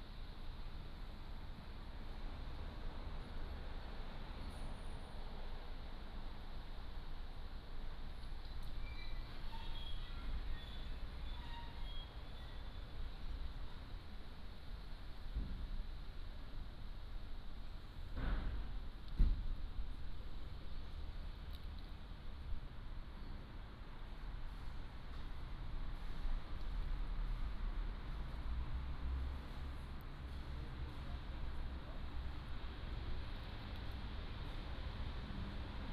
Small square outside the station, Traffic sound